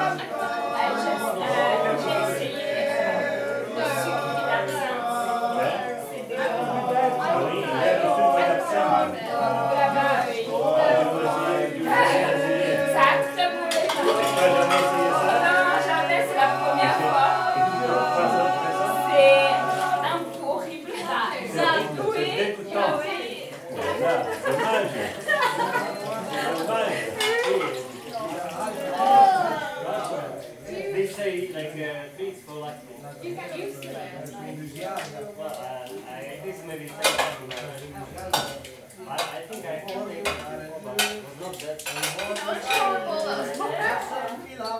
Via Maestra, Rorà TO, Italia - Stone Oven House August 29/30 2020 artistic event 2 of 3
Music and contemporary arts at Stone Oven House, Rorà, Italy, Set 2 of 3:
One little show. Two big artists: Alessandro Sciaraffa and Daniele Galliano. 29 August.
Set 2 of 3: Saturday, August 30th, h.11:40 p.m.
Piemonte, Italia, 29 August 2020, 23:40